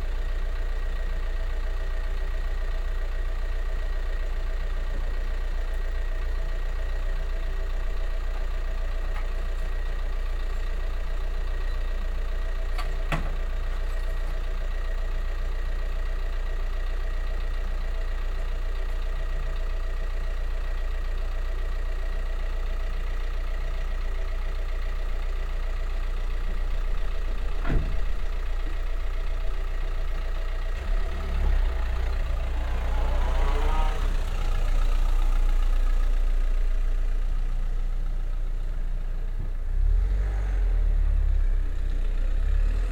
On the street. The sound of a tractor passing by and vanishing in the silence of the village. Then a post car arrives and stands with running engine while the postman empties the mail. Finally the car drives away.
Hupperdange, Traktor und Postauto
Auf der Straße. Das Geräusch von einem Traktor, der vorbeifährt und in der Stille des Tals verschwindet. Dann kommt ein Postauto und bleibt mit laufendem Motor stehen, während der Postbote den Briefkasten leert. Schließlich fährt das Auto davon.
Hupperdange, tracteur et camionnette des postes
Sur la route. Le bruit d’un tracteur qui passe et disparait dans le silence du village. Puis une camionnette des postes arrive et s’arrête moteur allumé pendant que le facteur vide la boite aux lettres. Enfin, la camionnette redémarre.
Hupperdange, Luxembourg, 13 September 2011